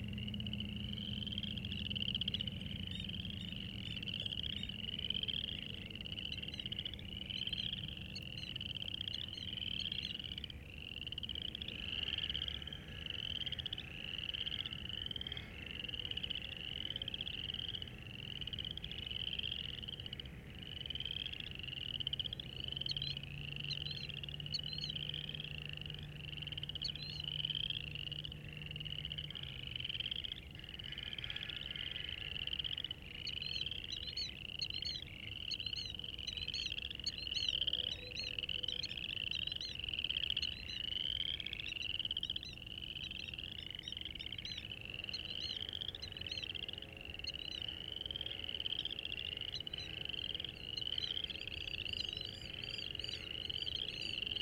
Spring peepers (chorus frogs) announce the beginning of spring. I sat on the side of the levee and recorded these frogs about 250 feet away from their seasonal pond. From prior experience I knew if I got any closer they would cease calling. As a result, there are also the sounds from the nearby concrete plant, birds circling overhead, planes and traffic.
Saint Louis County, Missouri, United States, 6 March 2021